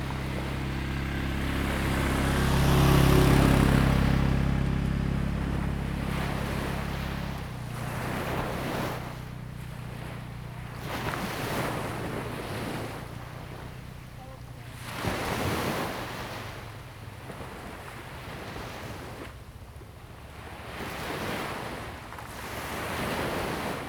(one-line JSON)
{"title": "Lieyu Township, Taiwan - Sound of the waves", "date": "2014-11-04 09:43:00", "description": "Sound of the waves\nZoom H2n MS +XY", "latitude": "24.45", "longitude": "118.24", "altitude": "8", "timezone": "Asia/Shanghai"}